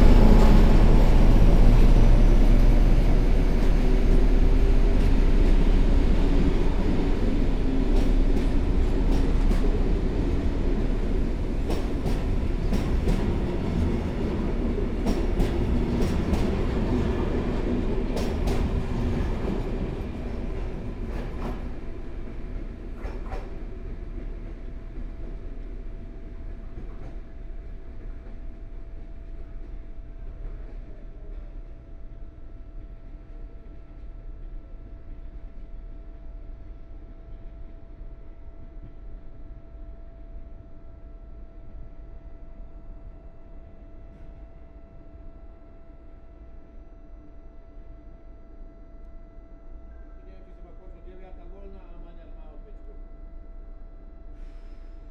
Staničné námestie, Košice-Staré Mesto, Slovakia - Trains at Košice Station
Train to Bratislava headed by diesel locomotive is leaving the station. Electric locomotive idling at the same platform. Short communication of station dispatcher and station announcement.